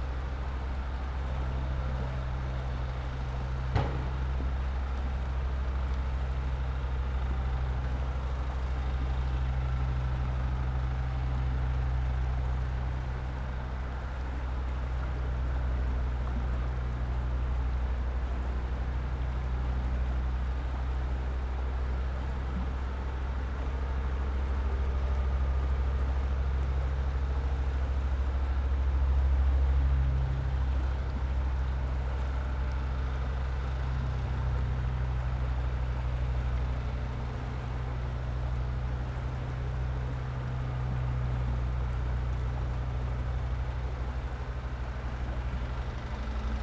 Lithuania, Vilnius, at the river
river and workers on the others side of the river